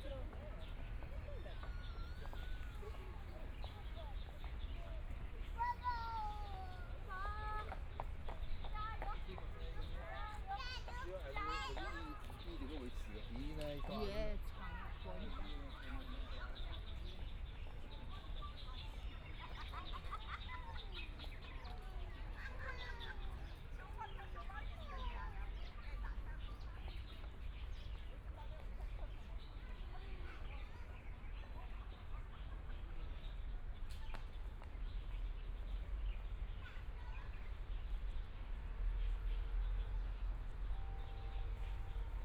{"title": "Yangpu Park, Yangpu District - Walking through the park", "date": "2013-11-26 11:32:00", "description": "Walking through the park, Binaural recording, Zoom H6+ Soundman OKM II", "latitude": "31.28", "longitude": "121.53", "altitude": "7", "timezone": "Asia/Shanghai"}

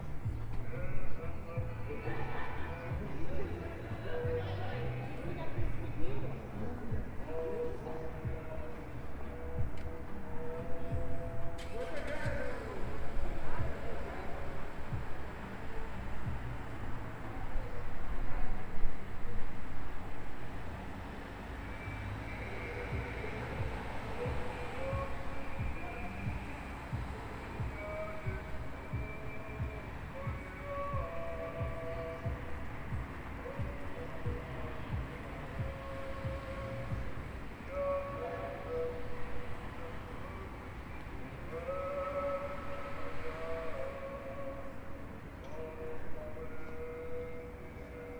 {"title": "ул. Красная, Краснодар, Краснодарский край, Россия - Day of Remembrance of the Holy martyrs Akindinos, Pigasias, Affonia and Elpidiphoros", "date": "2020-11-15 10:04:00", "latitude": "45.02", "longitude": "38.97", "altitude": "28", "timezone": "Europe/Moscow"}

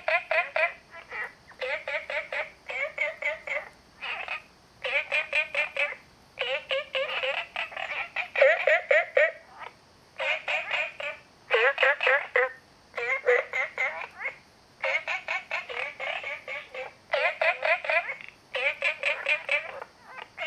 {"title": "綠屋民宿, 桃米里 Taiwan - Small ecological pool", "date": "2015-09-02 23:19:00", "description": "Frogs sound, Small ecological pool\nZoom H2n MS+ XY", "latitude": "23.94", "longitude": "120.92", "altitude": "495", "timezone": "Asia/Taipei"}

